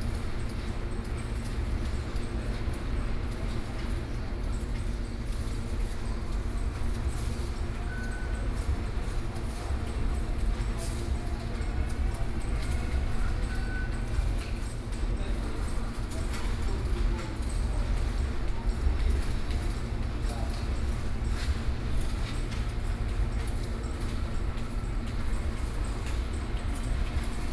Fuxinggang Station, Beitou District - MRT stations